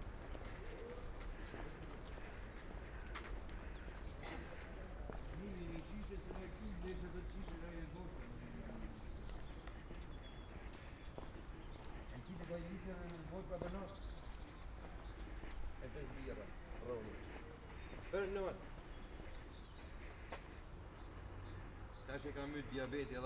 Ascolto il tuo cuore, città. I listen to your heart, city. Chapter IX - Round Noon bells and Valentino Park in the time of COVID1 Soundwalk
Sunday March 14th 2020. San Salvario district Turin, to Valentino park and back, five days after emergency disposition due to the epidemic of COVID19.
Start at 11:49 p.m. end at 12:49 p.m. duration of recording 59'30''
The entire path is associated with a synchronized GPS track recorded in the (kmz, kml, gpx) files downloadable here:
Piemonte, Italia, 15 March 2020, 11:49